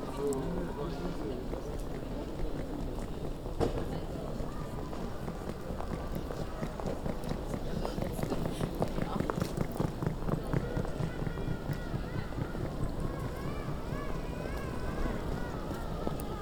Was passiert mit dem Frappant? Gespräch. Große Bergstraße. 31.10.2009 - Große Bergstraße/Möbelhaus Moorfleet